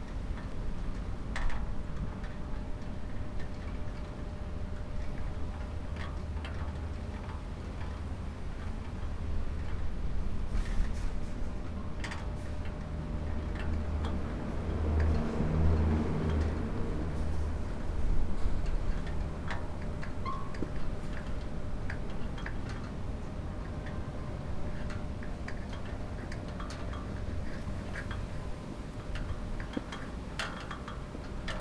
berlin, mittelbuschweg: fahnenmasten - the city, the country & me: flagstaffs
wind-whipped ropes of flagstaffs
the city, the country & me: july 20, 2013